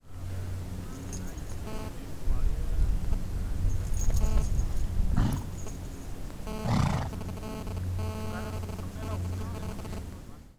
Valdidentro SO, Italia - mule-phone